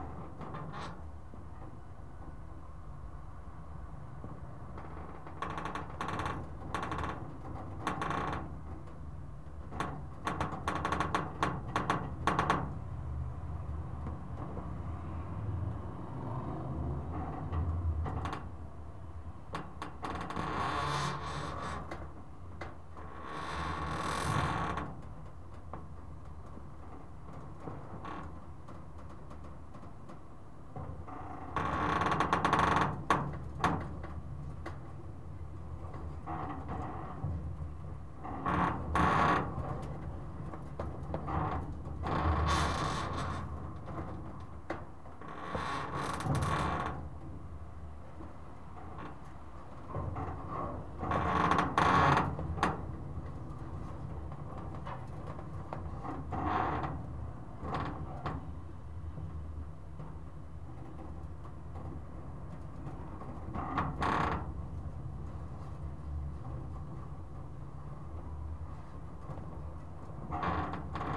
metal palisade moving by the action of the wind
Captation ZOOM H4n